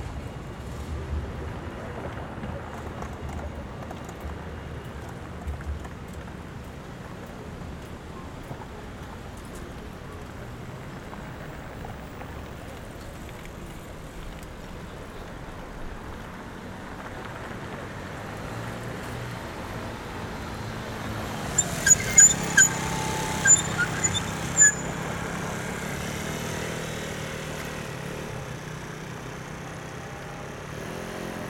Kruisplein, Rotterdam, Netherlands - Kruisplein
A busy day in the city center. Recent research indicates that this is one of the noisiest points in the city. Recorded with ZoomH8
Zuid-Holland, Nederland, January 2022